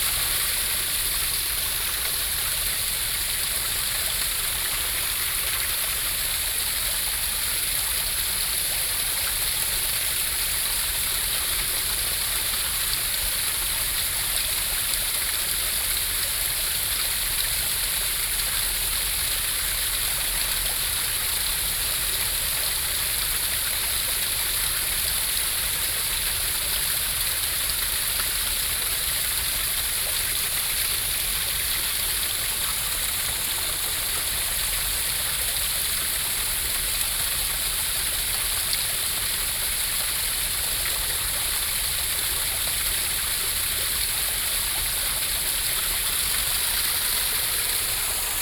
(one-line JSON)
{"title": "Beitou, Taipei - The sound of water", "date": "2012-06-22 07:31:00", "description": "The sound of water, Sony PCM D50 + Soundman OKM II", "latitude": "25.14", "longitude": "121.48", "altitude": "72", "timezone": "Asia/Taipei"}